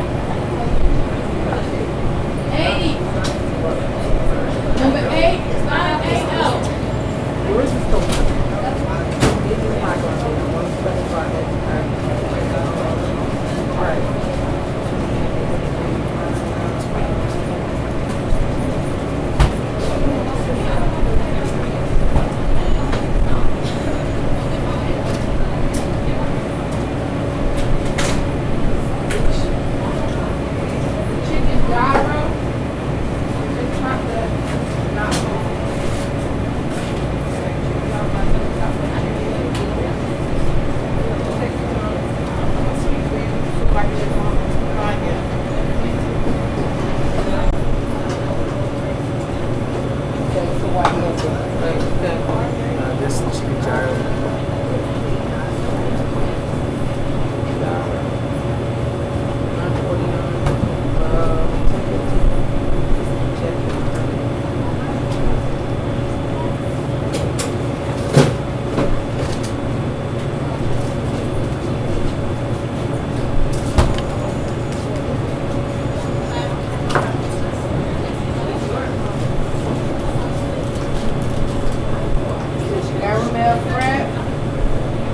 Library, The College of New Jersey, Pennington Road, Ewing Township, NJ, USA - Starbucks
Capturing the chaos of Starbucks by placing the recorder near the ordering station.